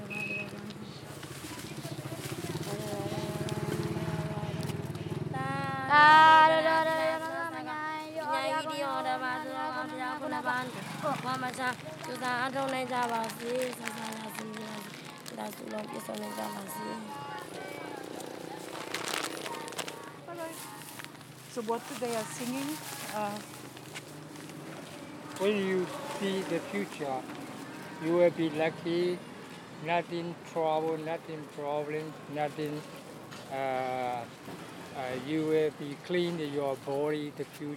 Maha Gandar Yone St, Mandalay, Myanmar (Birma) - little nuns go for alms
little nuns, orphan girls, go for alms near the big Mahagandayon monestary. people give food and money for them.